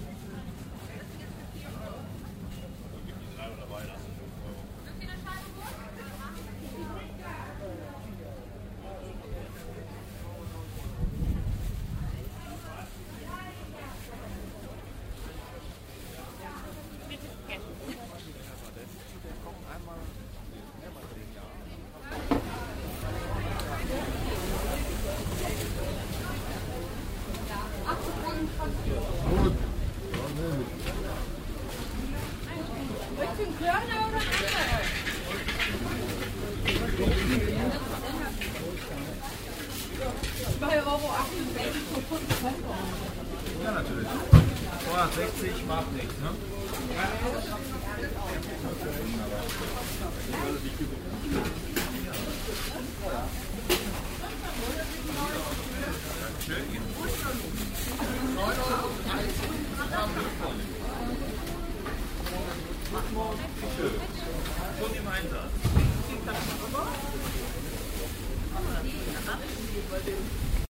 mettmann, markt
wochenmarkt morgens im frühjahr 07, gang um die kirche vorbei an diversen ständen
project: : resonanzen - neanderland - social ambiences/ listen to the people - in & outdoor nearfield recordings
marktplatz an der kirche, 19 April 2008